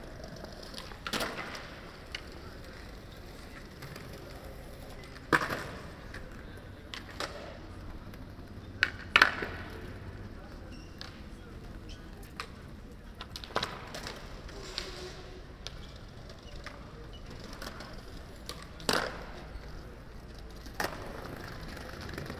Five or six kids skateboarding.